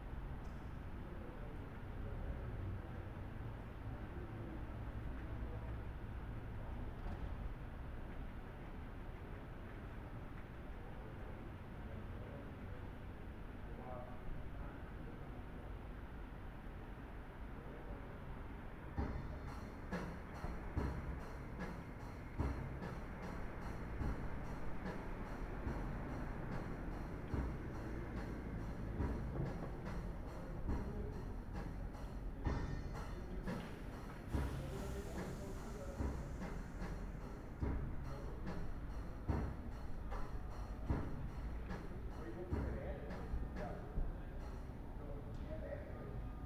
Antonigasse, Wien, Austria - Morning in Antonigasse
Drummer is practicing.